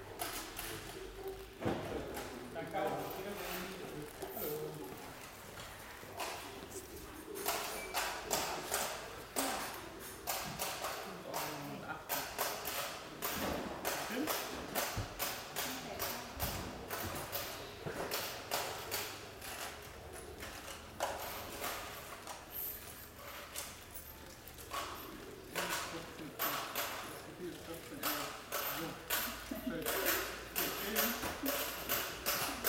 Ruppichteroth, inside DIY store
recorded july 1st, 2008.
project: "hasenbrot - a private sound diary"
Germany